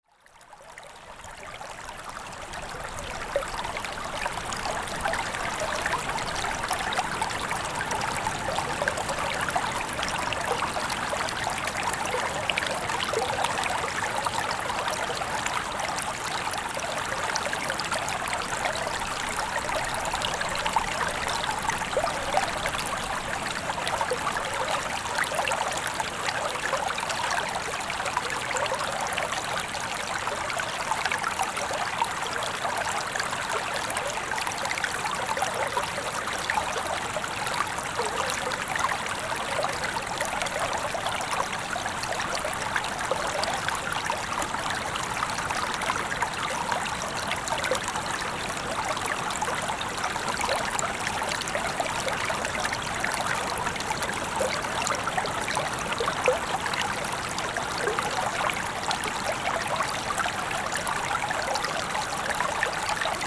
A small stream in the forest of Sauclières. This is a very quiet place.